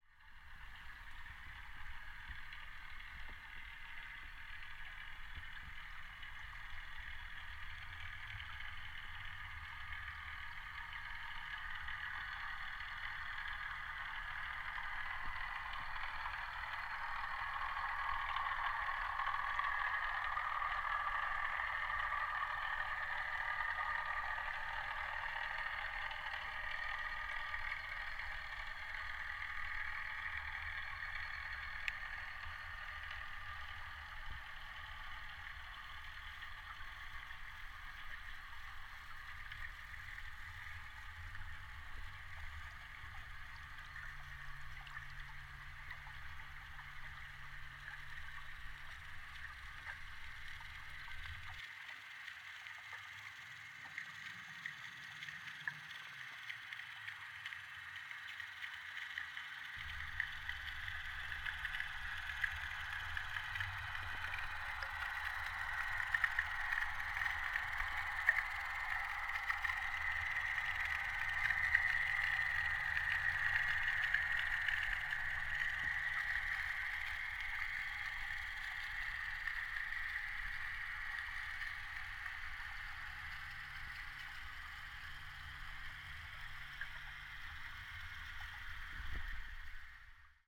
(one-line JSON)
{"title": "SHOUT Park, South Haven, Michigan, USA - SHOUT Park", "date": "2021-07-22 14:14:00", "description": "Hydrophone recording in Black River captures passing boats", "latitude": "42.41", "longitude": "-86.27", "altitude": "176", "timezone": "America/Detroit"}